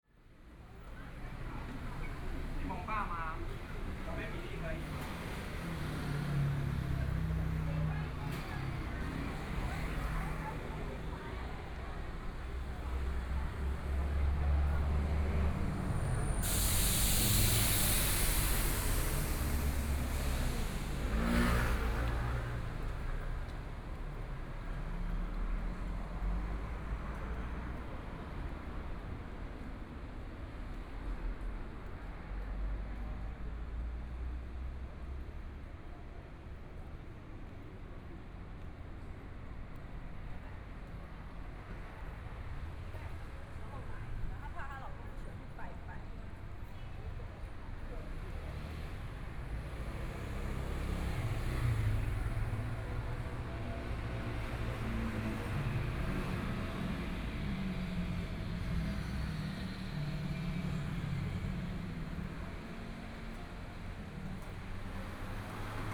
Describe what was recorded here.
Walking through the road, Traffic Sound, Motorcycle sound, Various shops voices, Binaural recordings, Zoom H4n + Soundman OKM II